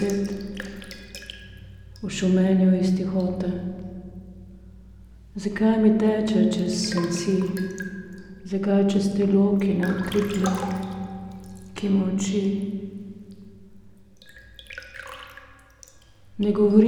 chamber cistern, wine cellar, Maribor - water, porcelain bowl, words
reading poem by Dane Zajc, Govori voda
Maribor, Slovenia, 26 November